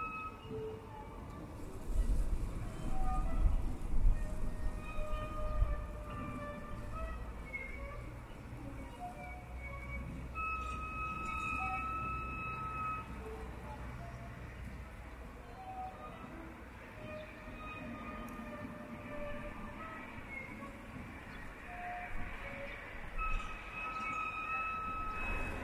East Visby, Visby, Sweden - Sad factory
"Sad factory" near Visby (near Terranova area) - a squeaky factory which sounds musical. One can distinguish "music instruments" like "flutes" and "drums" among these noises. Squeaky melodies were more complicated if the weather were windy. Seagulls, flag masts and cars are on background.